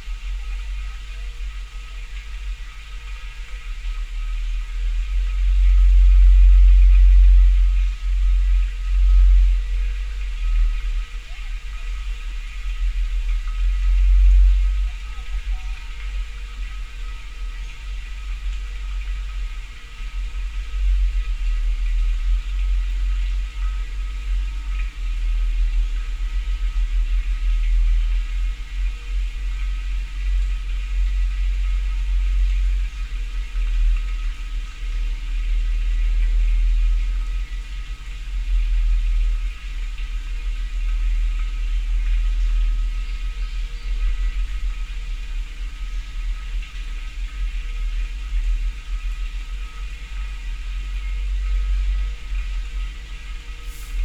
among a collection of clay urns beside a hanok in the Damyang bamboo forest area...2 narrow mouth-piece water jugs...
전라남도, 대한민국